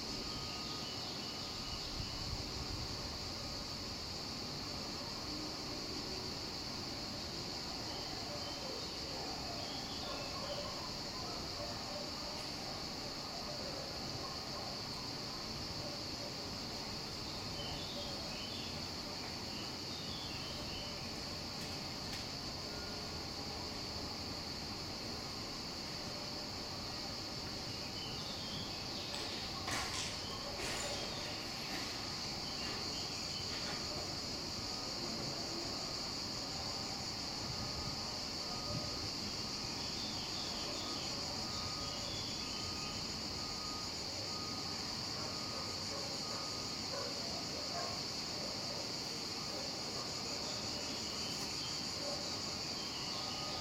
Panorâmico de Monsanto, Lisboa, Portugal - Panorâmico de Monsanto ruin #WLD2016 Monsanto Soundwalk listening posts 3to5 pt2
#WLD2016
Part 2
16 July